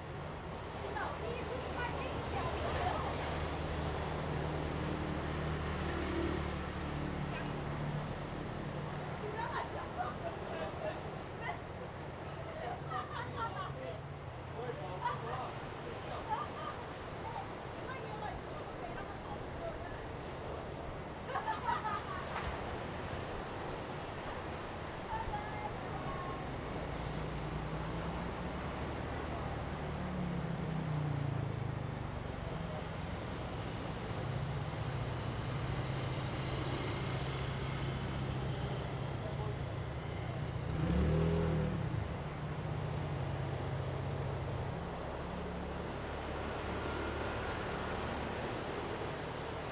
Ponso no Tao, Taiwan - On the coast
On the coast, Traffic Sound, Sound of the waves
Zoom H2n MS +XY
Taitung County, Taiwan, 28 October 2014